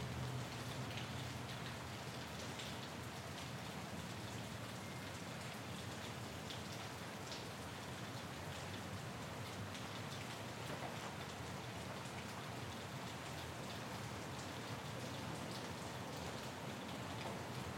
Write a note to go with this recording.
Sounds of rain at the M Train elevated station on Forest Avenue.